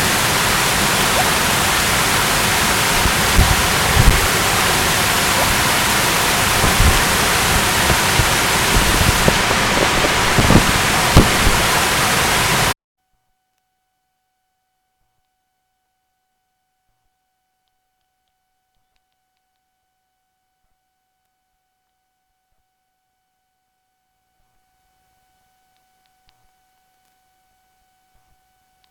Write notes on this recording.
Looking at the Fountain at plaza level